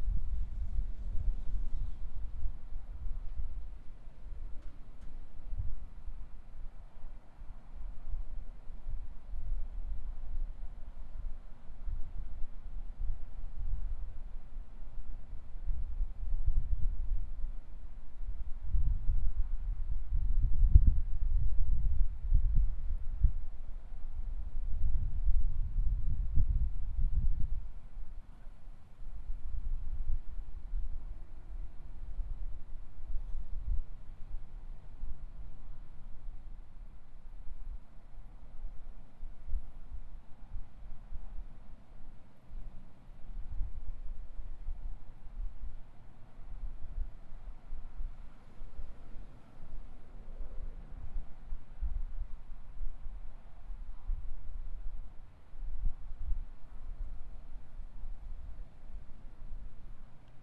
Buskirk Ave, Pleasant Hill, CA, USA - Pleasant Hill Parking Lot
Ambient sounds of cars passing on the freeway adjacent to the parking lot of a Best Buy, shopping carts and cars passing by, and the sound of wind.
Recorded on a Zoom H4n.